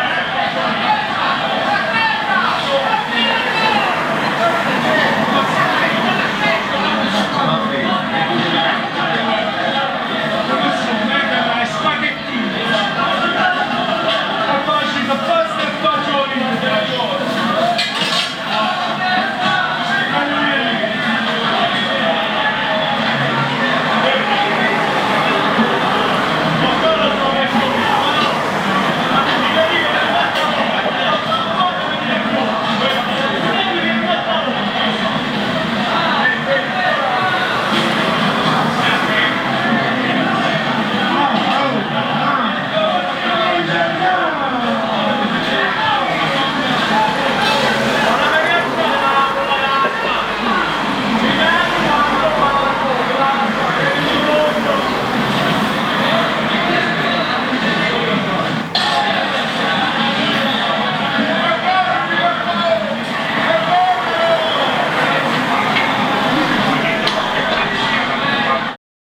Plumlovská, Bus Stop
VNITRUMILIMETRU
Its site-specific sound instalation. Sounds of energic big cities inside bus stops and phone booths in small town.
Original sound record of Roma by